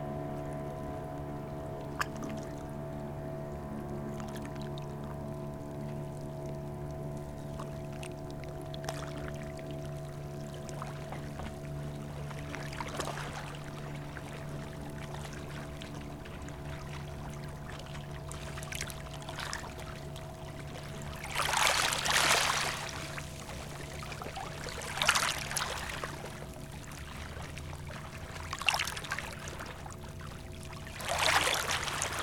Costabela, Rijeka, sea-boat
Sea, boat approaching ..
recording setup: M/S (Sony stereo condenser via Sony MD @ 44100KHz 16Bit
Rijeka, Croatia, 22 April 2002